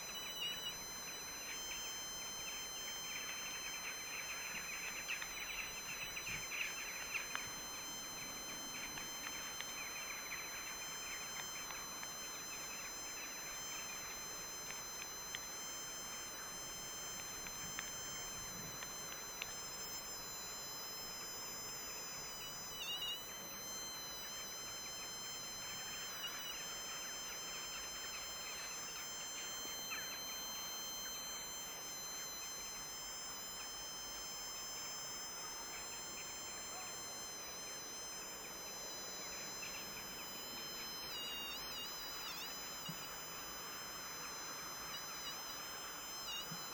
Utena, Lithuania, RF metering
I am standing in the midle of the frozen lake with Trifield TF2 EMF meter. The RF radiation exceeds all health norms...
Utenos rajono savivaldybė, Utenos apskritis, Lietuva, 25 January